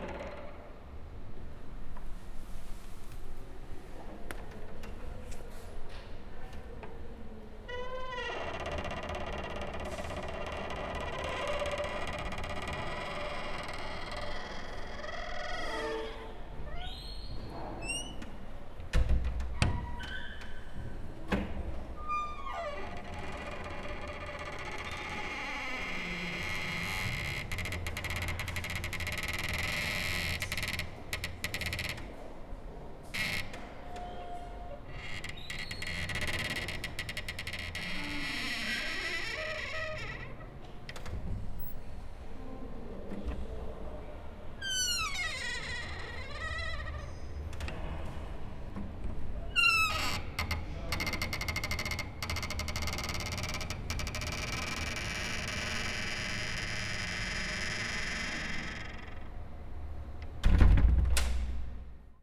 Poznań, downtown, imperial castle, coatroom in a stairwell - door solo
while waiting for company, i performed a short solo :) with the door of a coatroom, interesting acoustics of the stairwell
Poznań, Poland, September 2010